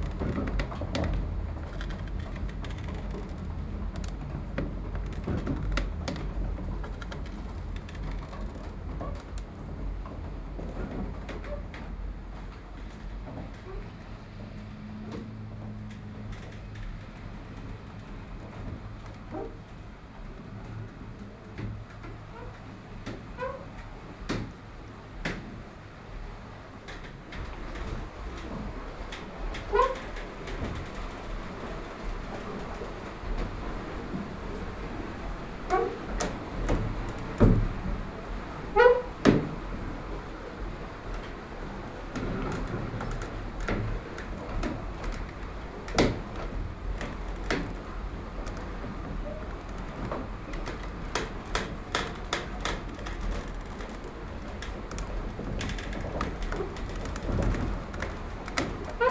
de Stevenshofjesmolen gaat malen
het remmen (vangen), het aankoppelen van het rad, het vervang er af (de remmen los) en het malen
the windmill is connected for turning the water
Leiden, The Netherlands, July 2011